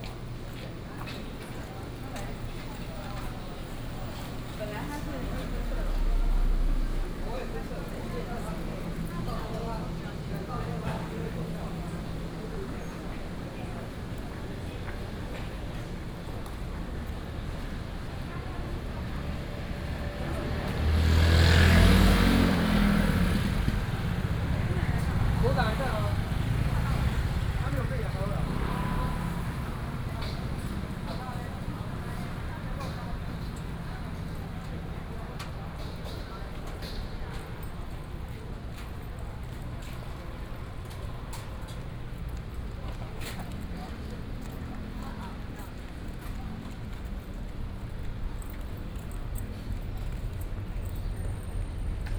Tong’an St., Da’an Dist., Taipei City - walking in the Street
walking in the Street, Traffic Sound, Bird calls